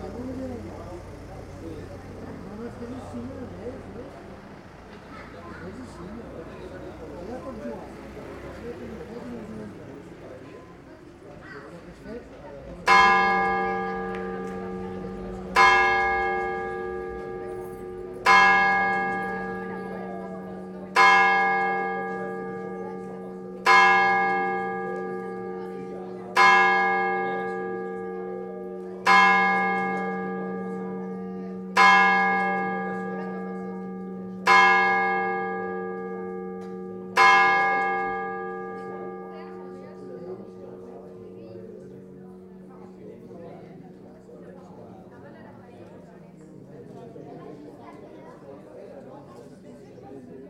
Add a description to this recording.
Tàrbena - Province d'allicante - Espagne, Ambiance du soir place du village et clocher 22h, ZOOM F3 + AKG C451B